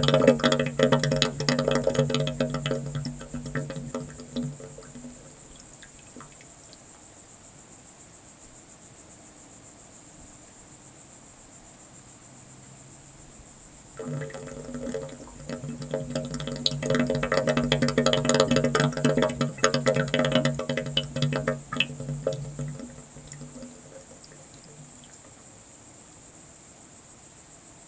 fountain, water, Auvergne, Puy-de-dôme, night, insects
Saint-Pierre-la-Bourlhonne, France